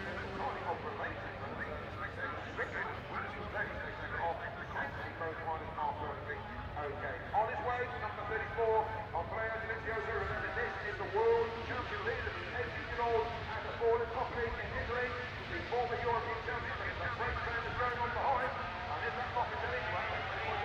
Unnamed Road, Derby, UK - British Motorcycle Grand Prix 2004 ... 125 race ...
British Motorcycle Grand Prix 2004 ... 125 race ... part one ... one point stereo mic to minidisk ...
25 July 2004